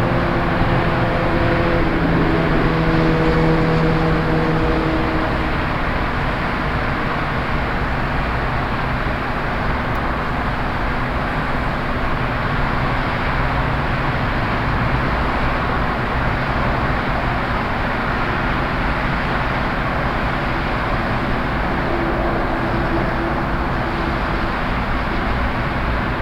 Fairfax, Lee Jackson Memorial Hwy, Road traffic
USA, Virginia, highway, road traffic, binaural